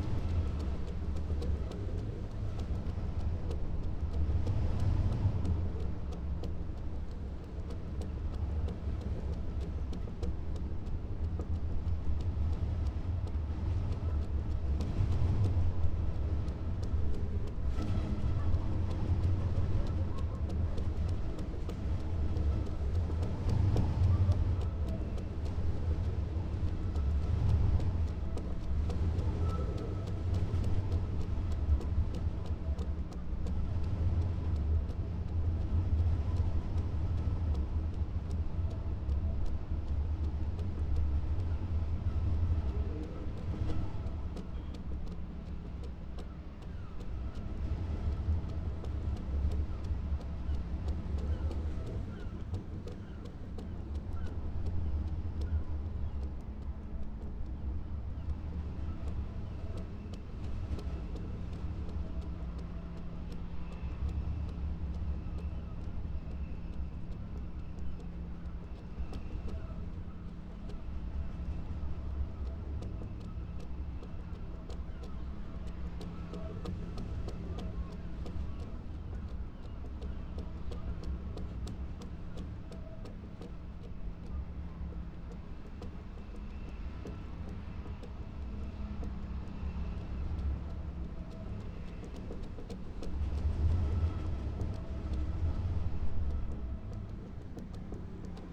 flagpole lanyard and iron work in wind ... xlr sass to zoom h5 ... bird calls from ... starling ... jackdaw ... herring gull ... oystercatcher ... lesser black-backed gull ... unedited extended recording ...